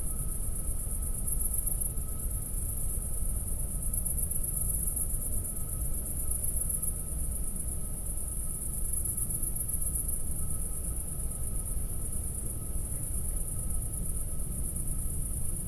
We are staying here for the night. Crickets are active and singing. At the backyard, the refinery is audible.